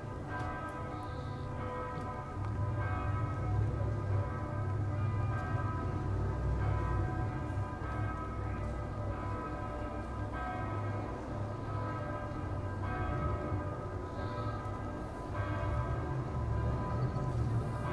market place, traffic, church bells, st. gallen
bus and car traffic, people walking by, constantly accompanied by the bells of the cathedral a few hundred metres away. recorded aug 31st, 2008.
St. Gallen, Switzerland